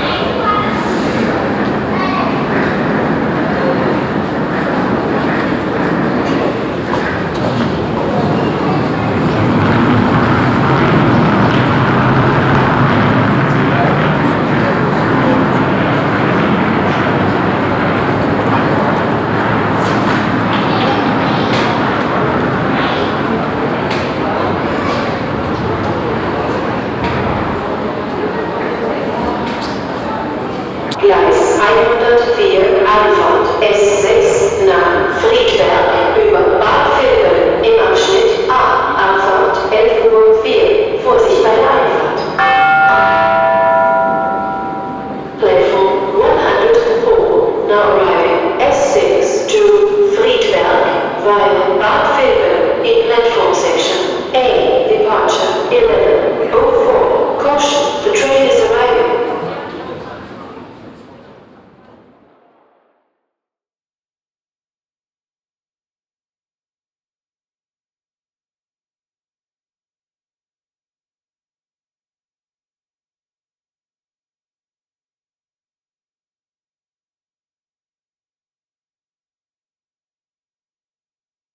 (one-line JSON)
{"title": "Gallus, Frankfurt, Deutschland - frankfurt, main station, sbahn department", "date": "2012-03-25 11:40:00", "description": "At the main station s-bahn tracks. The sound of the tunnel reverbing atmosphere and an announcemnt.", "latitude": "50.11", "longitude": "8.66", "altitude": "112", "timezone": "Europe/Berlin"}